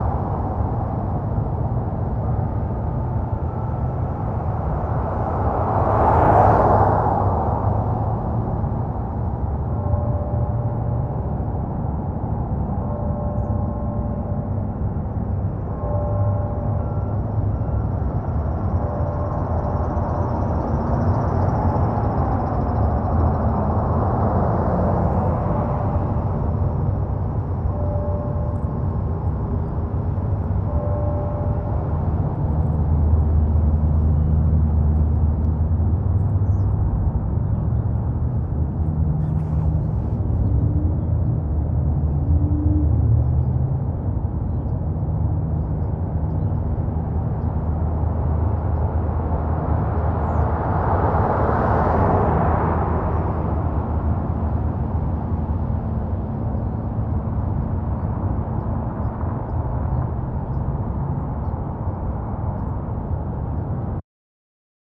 Rose Garden, Allentown, PA, USA - Bells in between Parkway and Chew along Ott
This recording was recorded around 9:00 AM. There was a lot of automobile traffic and I recorded it with a Sony.
5 December 2014